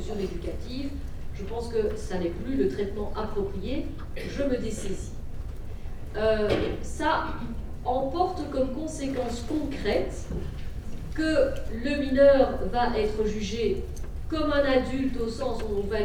Quartier des Bruyères, Ottignies-Louvain-la-Neuve, Belgique - A course of legal matters
In the Montesquieu auditoire, a course of legal matters. Near everybody is sleeping ^^